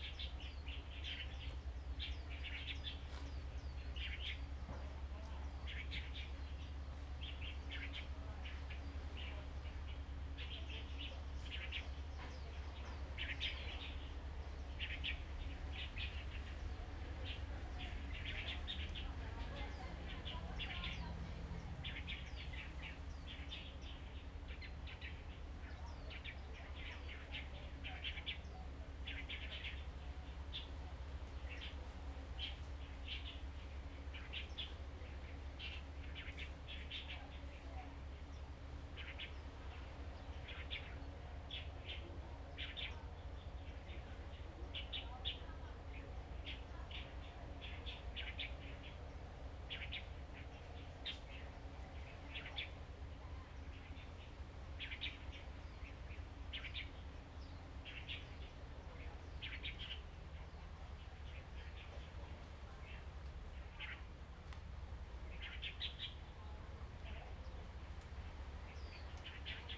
山豬溝, Hsiao Liouciou Island - In the woods and caves
Tourists, Sound of the waves, Birds singing, In the woods and caves
Zoom H2n MS +XY
Pingtung County, Taiwan, November 2014